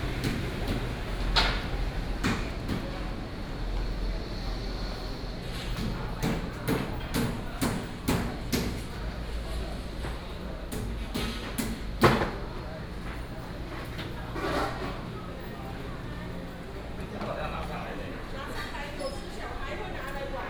南庄公有零售市場, Miaoli County - In the public retail market
In the public retail market, traffic sound, Traditional market, Binaural recordings, Sony PCM D100+ Soundman OKM II